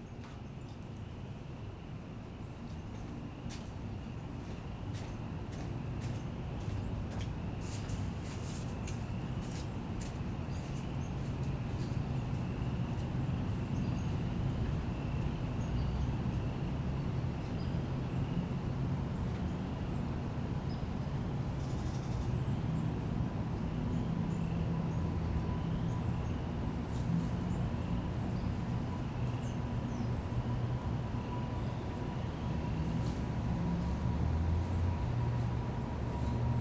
Mill Creek Pond, Alpharetta, GA, USA - Neighborhood Pond
Recording at a pond in a neighborhood park. The area is surrounded by traffic, which bleeds heavily into the recording. Birds are heard throughout. There were lots of geese, especially towards the end of the recording. There are frogs off to the right, but they're difficult to hear due to being masked by louder sounds. A group of people walked down to the gazebo next to the recorder around halfway through the session.
The recording audibly clipped a couple times when the geese started calling right in front of the recording rig. This was captured with a low cut in order to remove some of the traffic rumble.
[Tascam Dr-100mkiii & Primo EM-272 Omni mics]
Georgia, United States